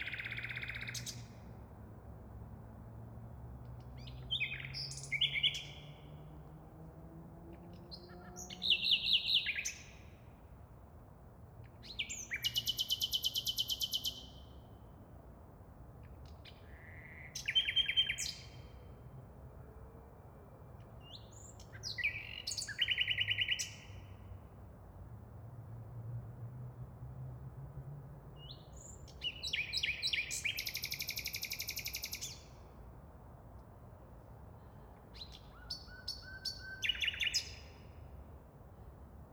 Berlin's nightingales are a joy to hear when coming home at night. Their songs from the dark interiors of parks, cemeteries, railway edges and playground bushes, are crystal clear even from a distance and they don't seem to mind if you approach more closely to listen. During late April and early May they are in full voice, particularly on warm nights.
Berlin, Germany, 29 April 2012, ~00:00